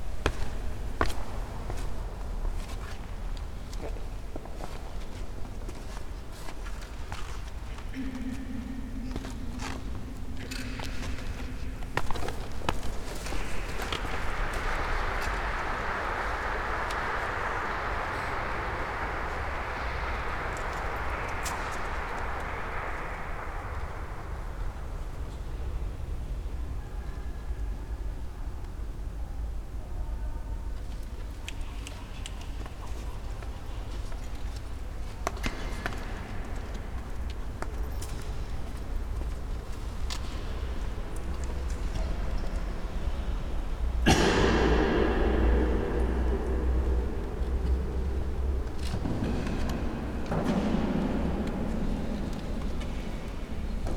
Mariánský Týnec monastery, Kralovice, Czechia - chapel ambience
Ambience inside the magnificent chapel of the monastery. Recorded during the 'Architecture of the Senses' seminar organized by the Agosto Foundation.